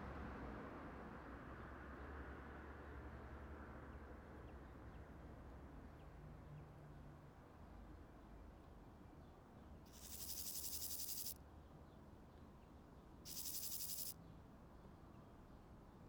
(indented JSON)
{
  "title": "Rijeka, Croatia - Last Crickets",
  "date": "2013-10-17 12:36:00",
  "description": "Sunny day, new generation crickets",
  "latitude": "45.35",
  "longitude": "14.43",
  "altitude": "302",
  "timezone": "Europe/Zagreb"
}